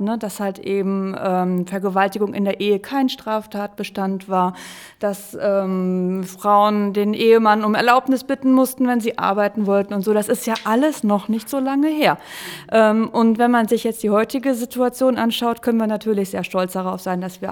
Gleichstellungsbüro, Rathaus Dortmund - das ist ja alles noch nicht so lange her...
we are joining Slavi as she enters the office of Maresa Feldmann in the city hall of Dortmund. Maresa Feldmann represents a city office which promotes parity for women. She introduces herself and discusses with Slavi the importance and responsibilities of her job... "women had to asked their husband if they wanted to take up a job... it's all not so long ago..."
the recording was produced during a three weeks media training for women in a series of events at African Tide during the annual celebration of International Women’s Day.